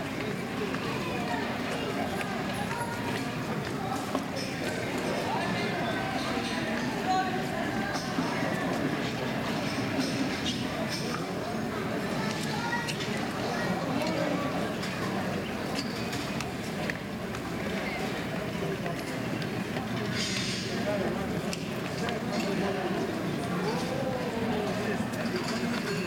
atmosphere in the core of the town towards the end of war; tower bells ringing 11a.m., a few commentaries of citizens about taking care